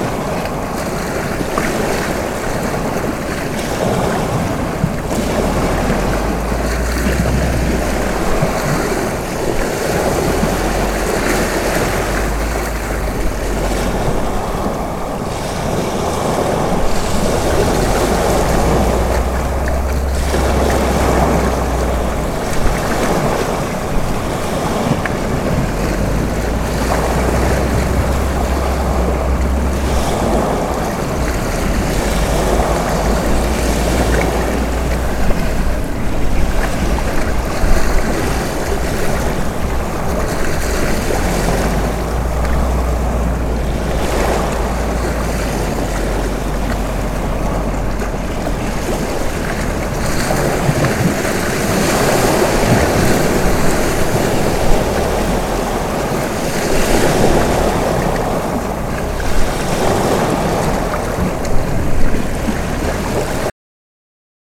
Sälsten, Härnösand, Sverige - a windy day by the sea
Recorded on a windy day by the sea, Sälsten, Härnösand. The recording was made with two omnidirectional microphones